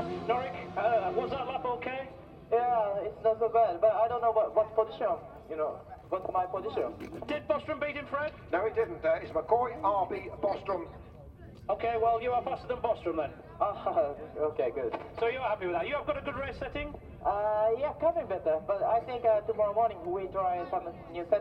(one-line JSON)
{"title": "Silverstone Circuit, Towcester, UK - world superbikes 2005 ... super pole ...", "date": "2005-05-05 16:00:00", "description": "world superbikes 2005 ... superpole ... one point stereo mic to sony minidisk ... plus commentary ...", "latitude": "52.07", "longitude": "-1.02", "altitude": "152", "timezone": "Europe/London"}